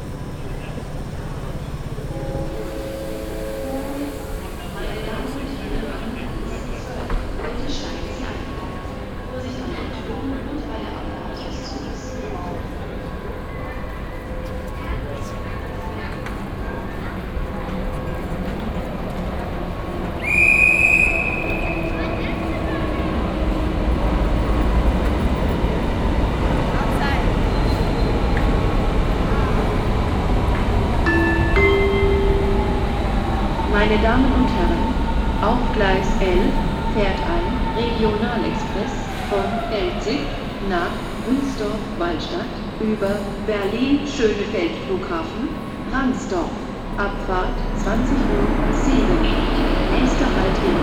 berlin main station, hall - walk, lowest to highest level
binaural recording of a movement from the lowest platforms to the top level of the station.
Berlin, Germany